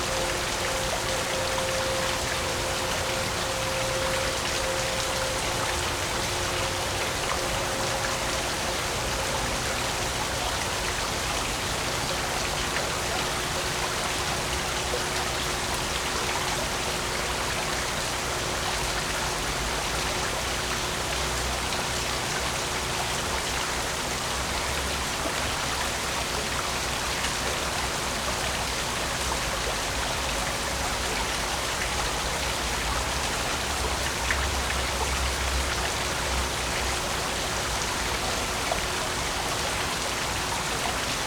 Walking Holme Holme Mill
The river as it passes the mill.